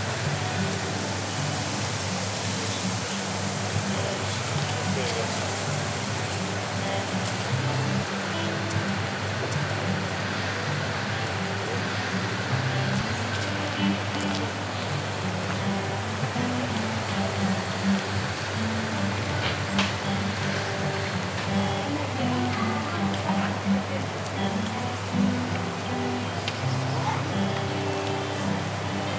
Nida, Lithuania - Near a hotel
Recordist: Anita Černá
Description: Recorded near a hotel. Guitar playing far away, insects and wind noises. Recorded with ZOOM H2N Handy Recorder.